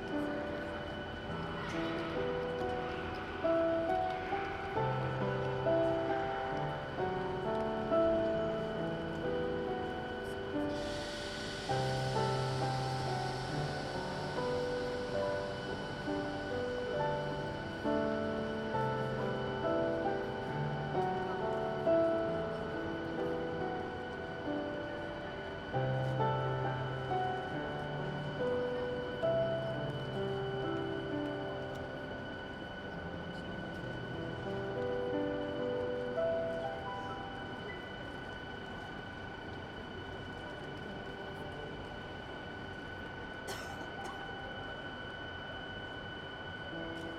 Gare de Lille Flandres - Département du Nord
Ambiance intérieure
ZOOM H3VR
Hauts-de-France, France métropolitaine, France, 29 February, 18:00